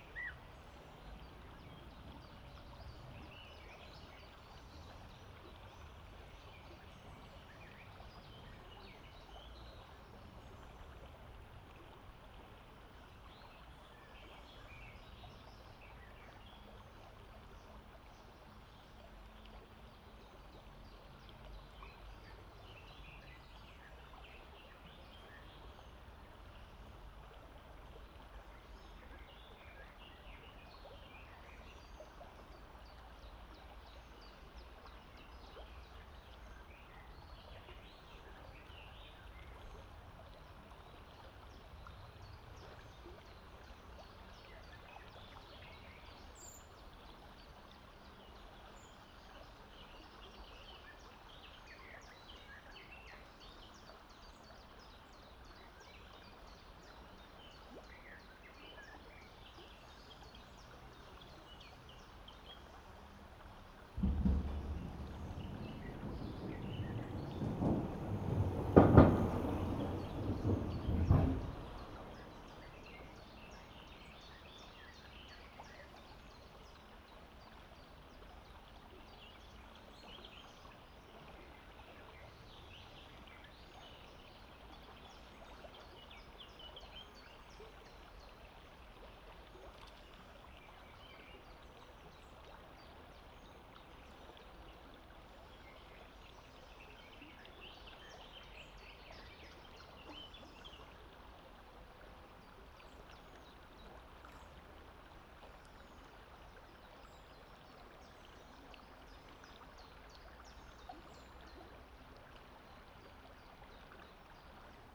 Beethovenstraße, Lauda-Königshofen, Deutschland - Unterbalbach, under the bike-path-bridge on river Tauber.
Around Noon on a hot day in a shady spot by the river Tauber. Recorded with an Olympus LS 12 Recorder using the built-in microphones .Recorder was placed underneath the bike-path-bridge. The soft murmur of the river can be heard and numerous birds singing and calling. Bicycles and small motorbikes passing over the bridge. Bikers talking. A local train passing by on the railway next to the river. In the end a person with a dog appears to cool down in the shallow water.